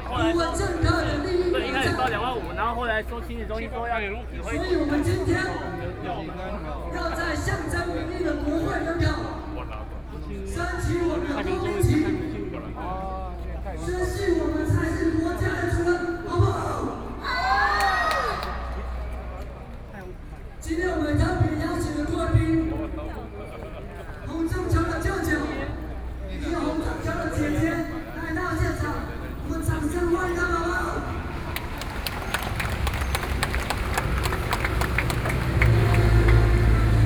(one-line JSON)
{"title": "Linsen S. Rd., Taipei City - Protest Speech", "date": "2013-10-10 10:48:00", "description": "Processions and meetings, Binaural recordings, Sony PCM D50 + Soundman OKM II", "latitude": "25.04", "longitude": "121.52", "altitude": "12", "timezone": "Asia/Taipei"}